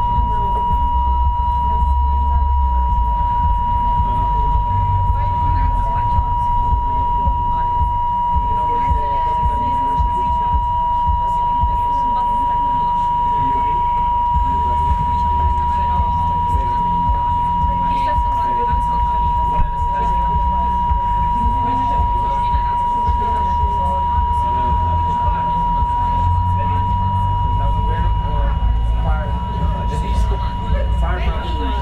berlin: friedelstraße - the city, the country & me: broken intercom system
the city, the country & me: june 21, 2012
Berlin, Germany, June 2012